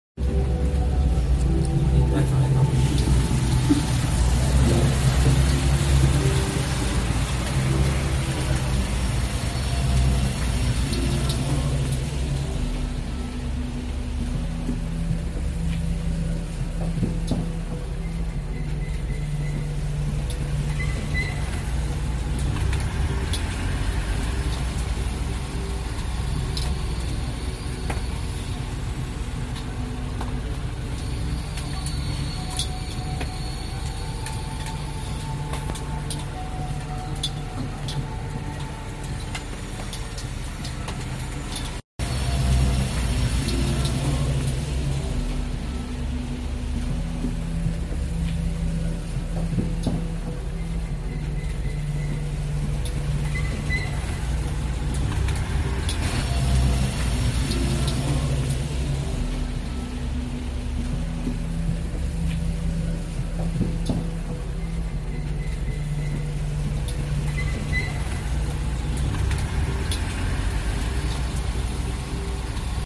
中国陕西省西安市碑林区南院门雕刻时光咖啡馆 - If you also feel cosy on a rainy day.且听雨吟
雨天宜倚楼听雨听风而眠
Record a rainy day in the coffee shop in the ancient city, my secret corner, the sounds of cars driving on the road, the noise, andmy heartbeat.
From Haruki Murakami's romance about summer: the slight south wind brings the scent of the sea and the smell of tar from the sun, reminding me of the warmth of the girl’s skin in the previous summer, old rock music, newly repaired shirts, changing clothes in the swimming pool The smell of smoke in the room and the subtle foreboding are some sweet dreams of endless summer.
2 July 2021